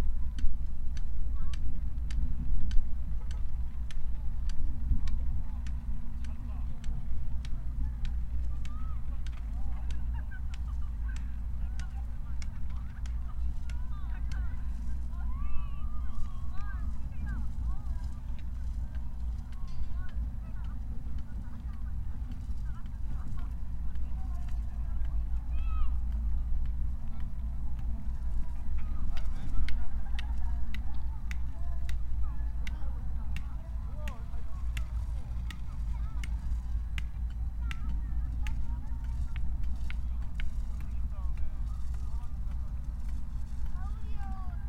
At a distance
A rare event, the foreground is silent and from across a distance, from the periphery, the sounds travel over to me...very clear echoes can be heard criss-crossing the auditorium.